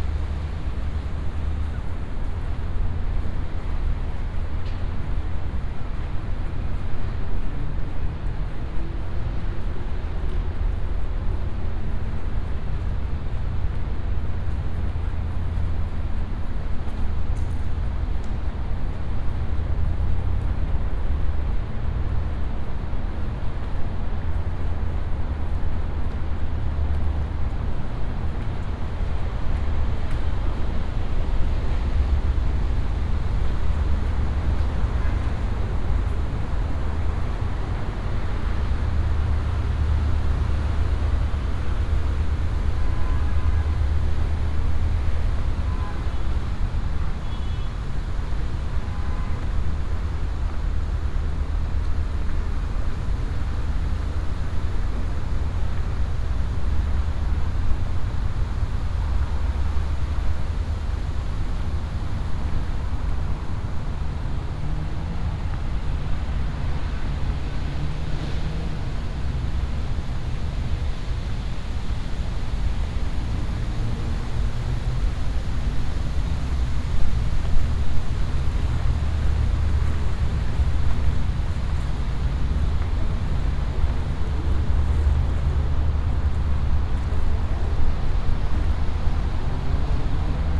Soundwalk through the south side of the Seine to the Musée d'Orsay.
recorded with Soundman OKM + Sony D100
sound posted by Katarzyna Trzeciak
25 September 2018, 17:12